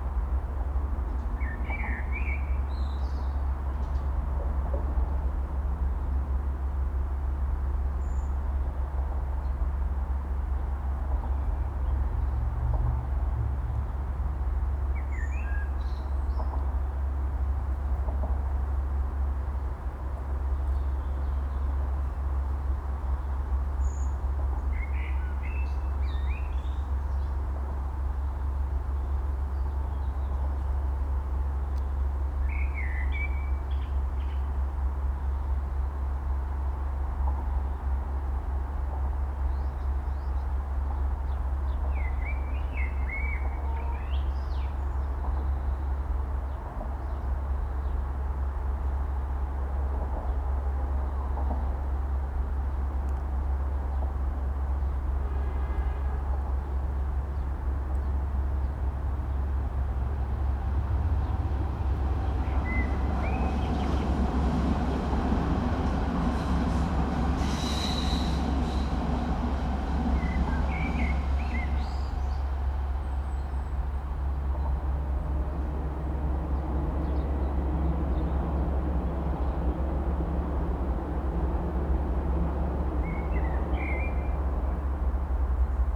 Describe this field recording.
Listening to the blackbird and to the mysterious throbbing bass in this spot, then walking under the bridge in cool shadow where footsteps reverberate. Trains pass by